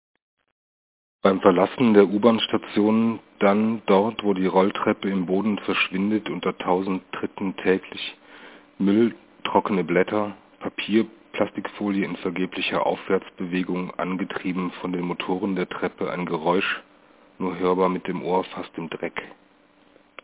Köln, Friesenplatz - U5 Friesenplatz Koeln 06.12.2006 23:40:15